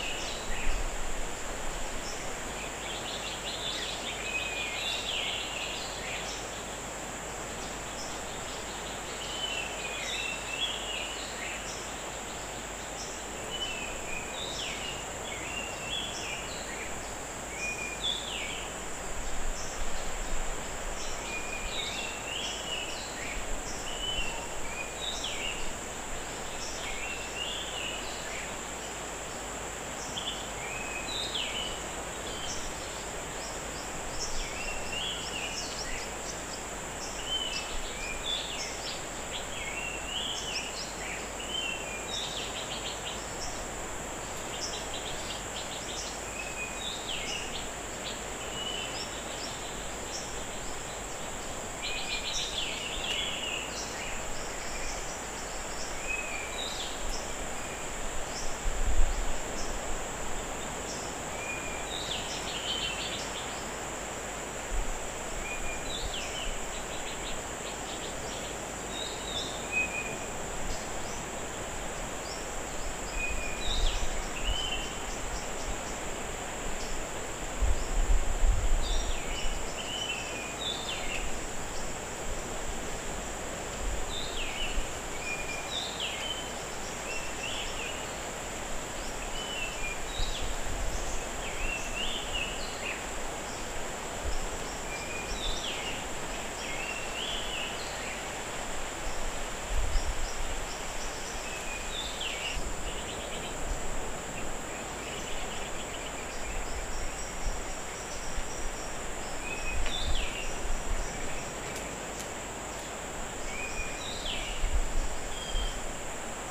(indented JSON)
{
  "title": "Jalan Similajau National Park, Bintulu, Sarawak, Maleisië - songbird and sea in Similajau NP",
  "date": "2007-12-29 18:21:00",
  "description": "small black and white birds with relatively big voices at the sseaqside inb Similajau National Park. We called them magpie finch, because that's what they look like to an European swampdweller. Similajau is a quiet amazing place ideal to relax.",
  "latitude": "3.35",
  "longitude": "113.16",
  "altitude": "10",
  "timezone": "Asia/Kuching"
}